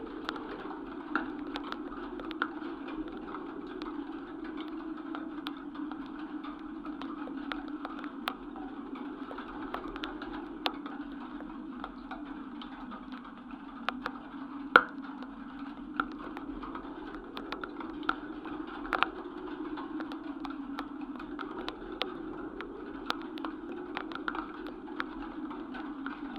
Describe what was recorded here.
Here in this desertic land, there's an enclosure, where farmers put dead bodies as sheeps or cows. As this, vultures can eat. I began to record the fence with contact microphones, but a strong snow began to fall, with an atrocious cold wind. The sound ? It just makes something weird I didn't want to erase, as it was so strange to be with dead bodies in a so desertic and hostile place...